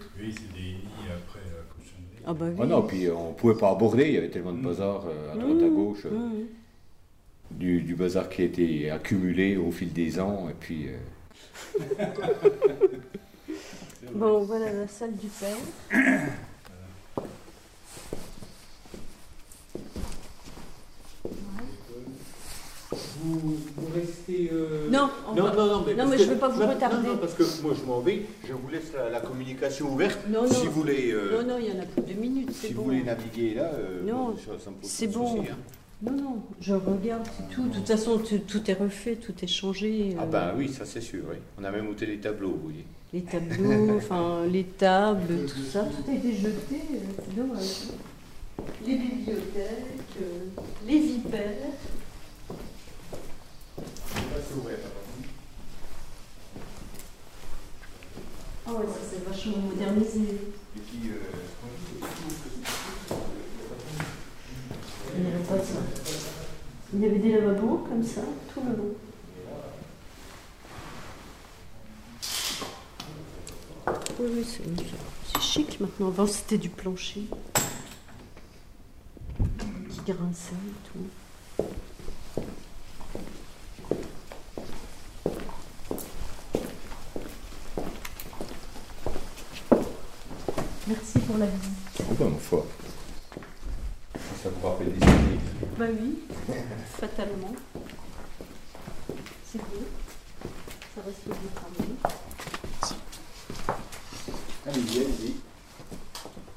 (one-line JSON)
{"title": "Agnès - Salle de classe / Travexin, France - Agnès salle de classe", "date": "2012-10-21 15:00:00", "description": "Agnès revient dans l'ancienne école, lieu de son enfance.\nDans le cadre de l’appel à projet culturel du Parc naturel régional des Ballons des Vosges “Mon village et l’artiste”", "latitude": "47.94", "longitude": "6.83", "altitude": "576", "timezone": "Europe/Paris"}